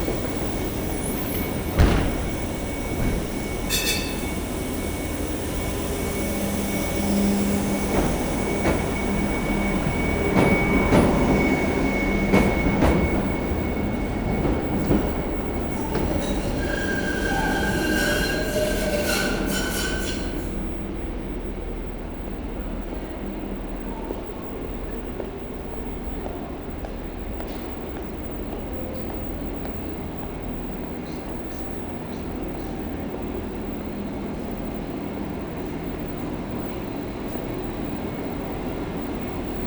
Recording of the worrisome Waterloo tramway station in Charleroi. There's nearly nobody excerpt some beggars sleeping. Tramways make harsh sounds because the tracks are curve.